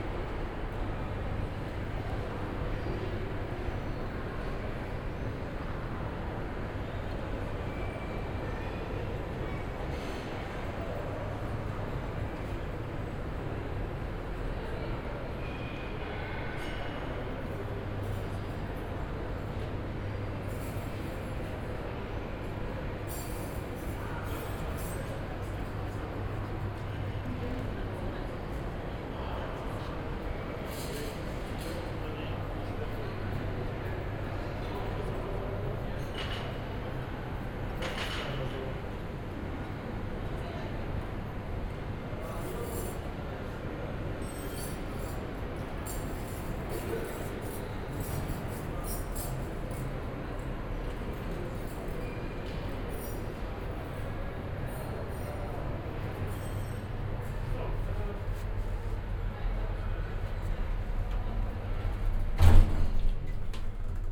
cologne, mediapark, cinedom, foyer - cinedom, move up
move from ground level to 3rd floor by elevators and escalators.
(binaural, use headphones!)
10 October 2010, 18:00